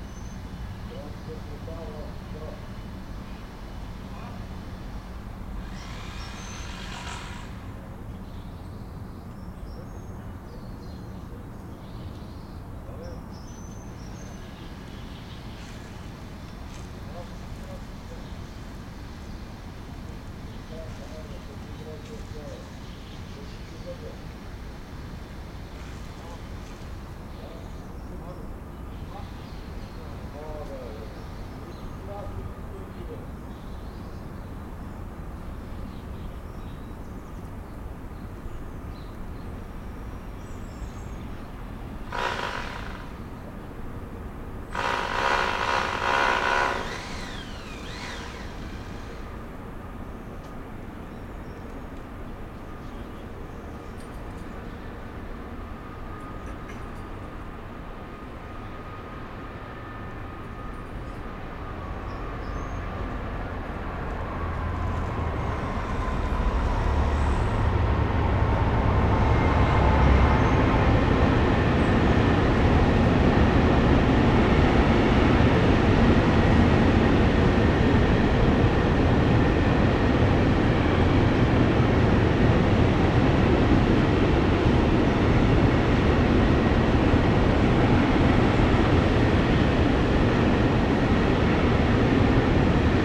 leipzig alt-lindenau, gartenanlage die quecke, morgens um 8
gartenanlage die quecke frühs um 8. man hört vögel, handwerker in der ferne, zum schluss einen zug hinter der gartenanlage in richtung bahnhof lindenau fahrend.
Leipzig, Deutschland, 1 September 2011